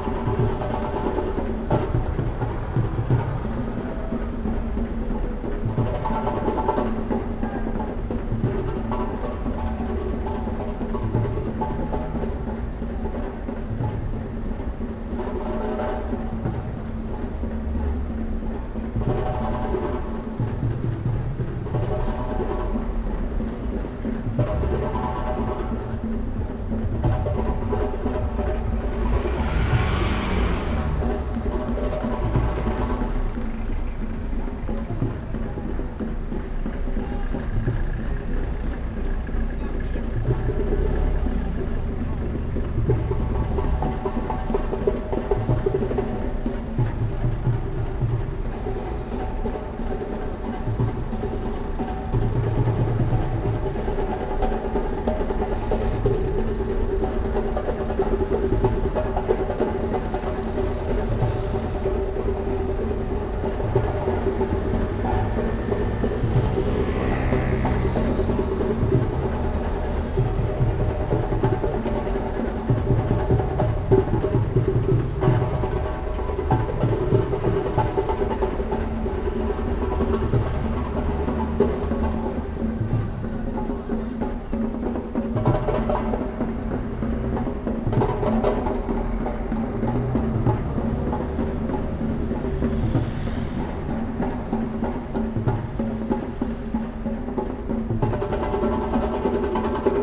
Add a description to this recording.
Today it was very hot and humid in Brussels. As we passed near to the Beurs, we heard amazing Djembe sounds and some guys had gathered on the steps to do amazing African drumming. We were on the other side of the street, and at one point I became fascinated by the way that the drumming sound intermittently dissolved into, and rose out, from the sound of the traffic. Even though it was a very busy, loud street, you can still also hear very slightly the applause of the sleepy folks sat on the steps near the drummers, hypnotised a little bit by the repetitive sound and the languid heat of the day. Recorded with EDIROL R-09 and simply the onboard microphones. Listen out for the nice bicycle bell passing by.